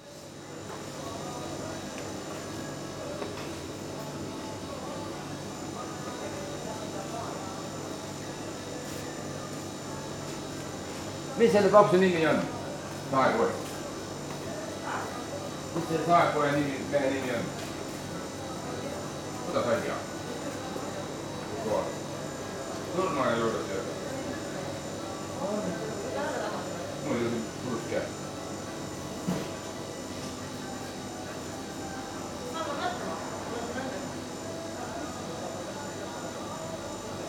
Pagari Shop, Pagari Estonia
sounds captured inside the local shop. recorded during the field work excursion for the Estonian National Museum.
Ida-Virumaa, Estonia, 6 July 2010